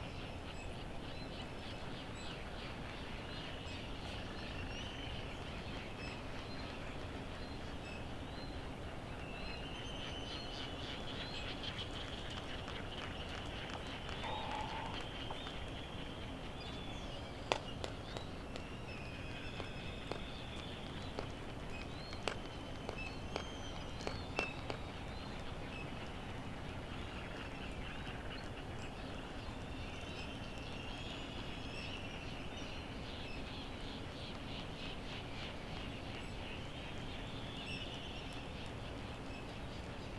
United States Minor Outlying Islands - Laysan albatross soundscape ...
Sand Island ... Midway Atoll ... laysan albatross soundscape ... open lavalier mics ... birds ... laysan albatross eh eh eh calls are usually made by birds on the nest ... though they may not be ... as the area is now covered with chicks ... bonin petrels ... white terns ... background noise ...
March 2012